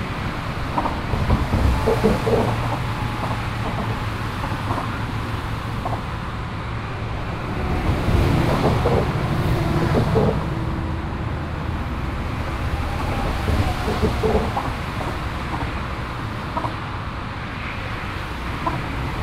{"title": "erkrath, an der a3, hinter schallschutzwand", "description": "soundmap: erkrath/ nrw\nstrassenverkehr an brücken dehnungsfuge an deutschlands grösster autobahnbrücke, hinter schallschutzwand, mittags - märz 2007\nproject: social ambiences/ - in & outdoor nearfield recordings", "latitude": "51.23", "longitude": "6.92", "altitude": "58", "timezone": "GMT+1"}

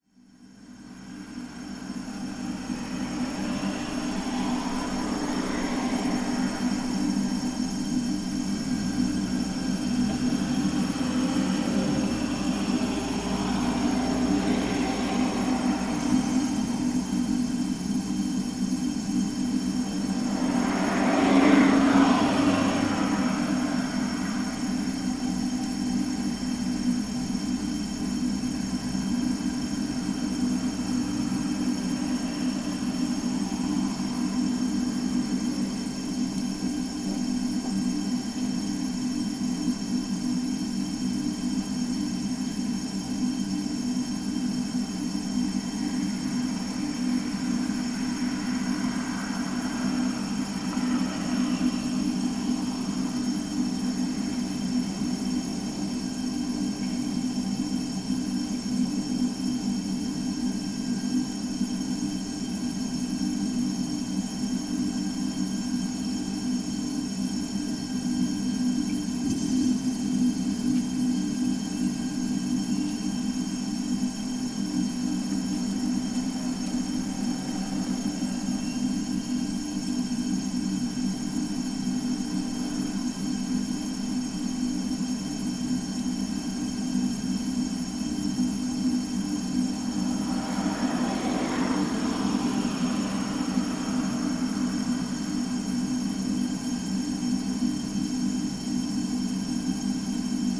{"title": "Gėlių g., Ringaudai, Lithuania - Gasbox hum", "date": "2021-04-20 09:30:00", "description": "A humming gasbox near a \"Maxima\" store. Mid-recording the hum becomes considerably louder as more gas is being drawn. Some background traffic sounds can also be heard. Recorded from a point-blank distance with ZOOM H5.", "latitude": "54.89", "longitude": "23.80", "altitude": "82", "timezone": "Europe/Vilnius"}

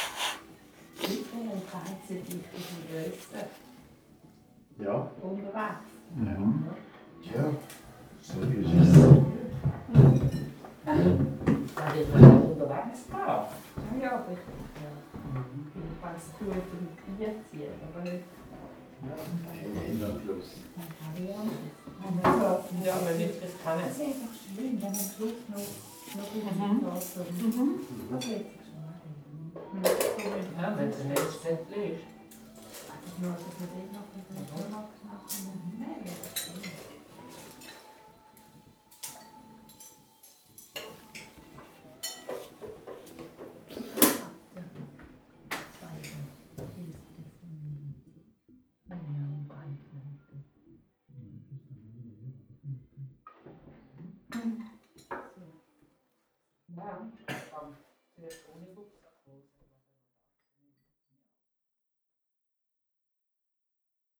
{"title": "Schiltach, Deutschland - Schiltach, hotel, breakfast room", "date": "2012-05-20 08:00:00", "description": "In a hotel breakfast room in the morning time. The sound of hotel guests talking while eating their breakfast. Percussive accents of plates and dishes. In the background radio muzak.", "latitude": "48.29", "longitude": "8.34", "altitude": "340", "timezone": "Europe/Berlin"}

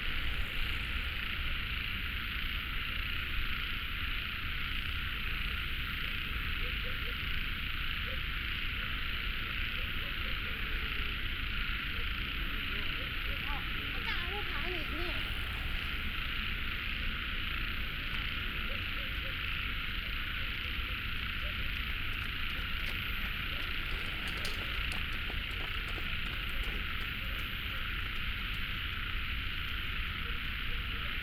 {"title": "北投區關渡里, Taipei City - Frogs sound", "date": "2014-03-17 18:47:00", "description": "Traffic Sound, Environmental sounds, Birdsong, Frogs, Running sound, Bicycle through\nBinaural recordings", "latitude": "25.12", "longitude": "121.47", "timezone": "Asia/Taipei"}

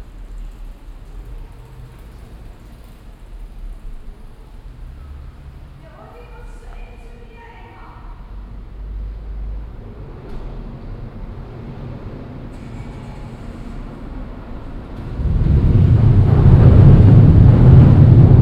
{"title": "cologne, maybachstrasse, unterführung, ein zug", "date": "2008-08-28 08:52:00", "description": "nachmittags unter zugbrücke, hallende schritte und stimmen, pkw und radverkehr, eine zugüberfahrt\nsoundmap nrw: social ambiences/ listen to the people - in & outdoor nearfield recordings", "latitude": "50.95", "longitude": "6.95", "altitude": "53", "timezone": "Europe/Berlin"}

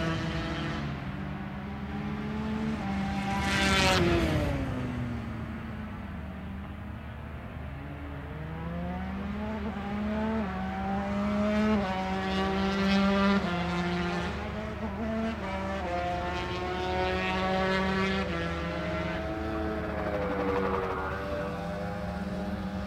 {"title": "Donington Park Circuit, Derby, United Kingdom - British Motorcycle Grand Prix 2002 ... 125 ...", "date": "2002-07-12 09:00:00", "description": "British Motorcycle Grand Prix 2002 ... 125 free practice ... one point stereo mic to minidisk ...", "latitude": "52.83", "longitude": "-1.38", "altitude": "94", "timezone": "Europe/London"}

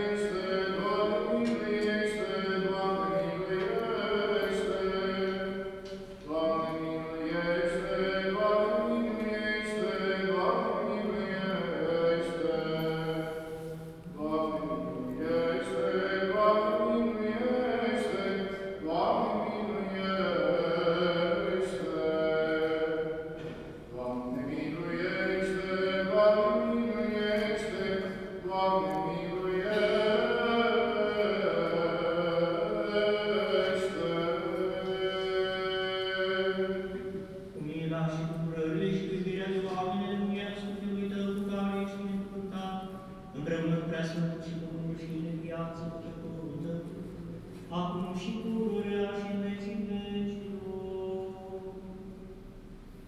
{"title": "Strada Ion Ghica, București, Romania - celebration in the orthodox church", "date": "2017-09-26 18:00:00", "latitude": "44.43", "longitude": "26.10", "altitude": "78", "timezone": "Europe/Bucharest"}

